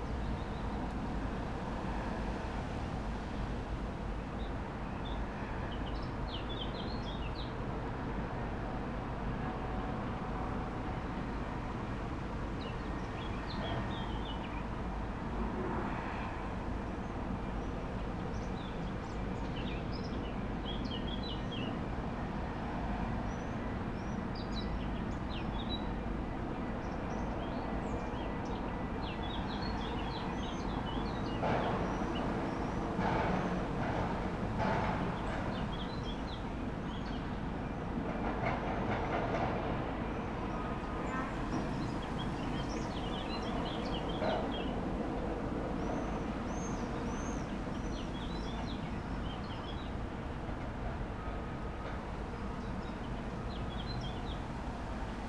Rte de Belval, Esch-sur-Alzette, Luxemburg - wind, machine sounds
Esch-sur-Alzette, machine sounds from the nearby Acelor Mittal plant premises, fresh wind in trees
(Sony PC D50, Primo EM172)
Canton Esch-sur-Alzette, Lëtzebuerg